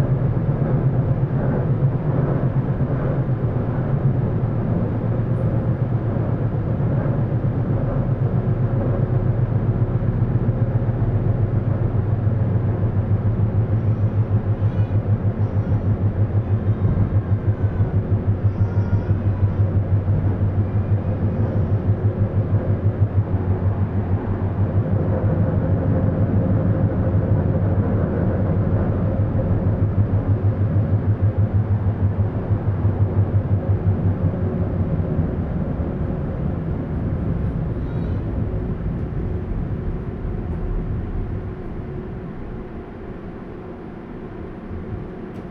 Tram ride Recorded with an Olympus LS 12 Recorder using the built-in microphones. Recorder hand held.
Bern/Berne, Schweiz/Suisse/Svizzera/Svizra, 3 September, 08:30